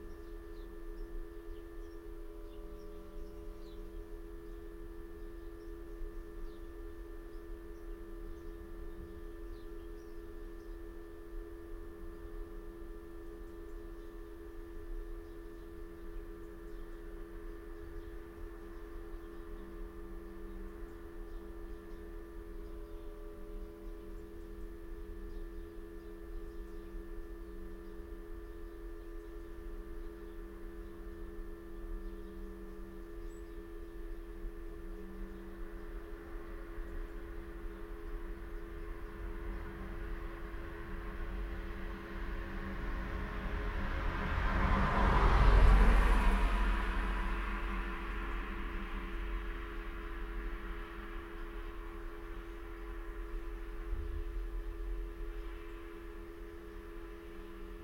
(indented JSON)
{
  "title": "Post Box, Malton, UK - inside the telephone box ...",
  "date": "2020-01-01 11:15:00",
  "description": "inside the telephone box ... the kiosk is now defunct ... bought for a £1 ... houses a defibrillator ... that produces the constant low level electrical hum ... and a container for newspapers ... recorded with Olympus LS 14 integral mics ... passing traffic etc ...",
  "latitude": "54.12",
  "longitude": "-0.54",
  "altitude": "77",
  "timezone": "Europe/London"
}